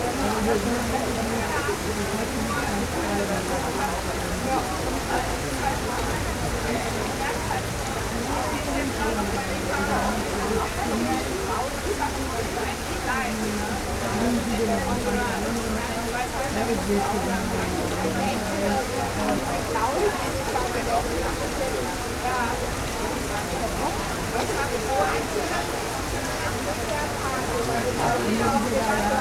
rain hitting the plastic roof after thunderstorm
the city, the country & me: july 7, 2012
99 facets of rain
7 July 2012, ~15:00